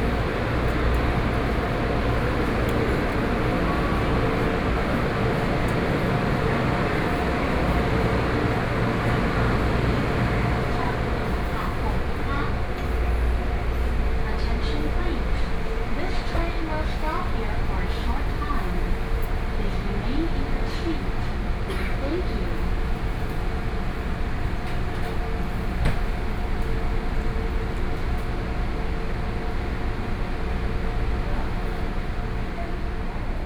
Su'ao Station, Taiwan - in the station hall
Sitting in the station hall, Ceilinged space station, When passengers rarely, Zoom H4n+ Soundman OKM II